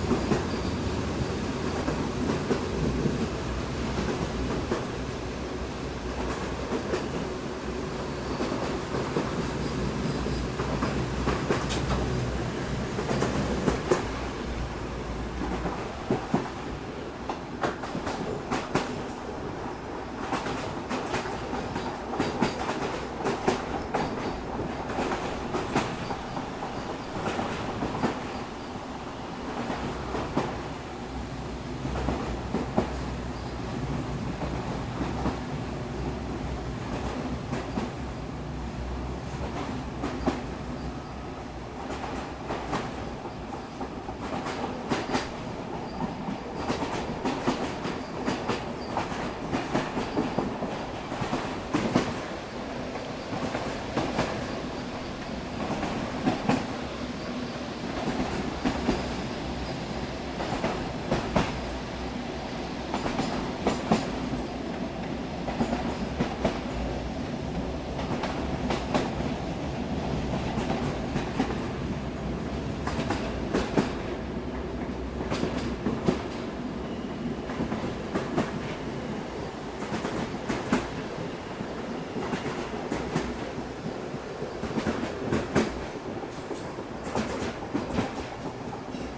the same sound that cradles you into sleep and wakes you up, endless bulgarian railroad impressions, tacted by a fractal beauty of never equal repetition.